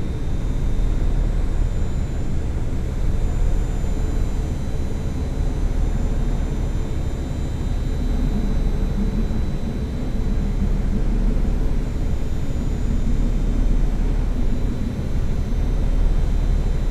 Charleroi, Belgium - Industrial soundscape
Industrial soundscape near the Thy-Marcinelle wire-drawing plant. Near the sluice, in first a bulldozer loading slag, after a boat entering (and going out) the sluice. The boat is the Red Bull from Paris, IMO 226001090.